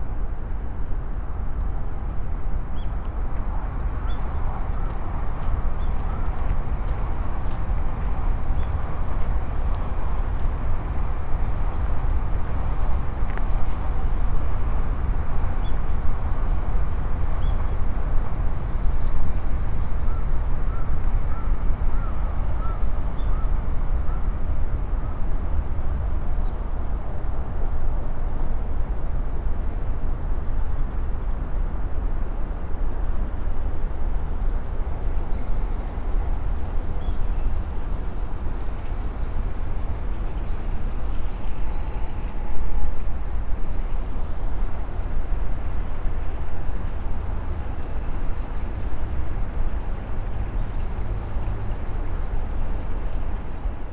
kyu shiba-rikyu gardens, tokyo - helicopter
Tokyo, Japan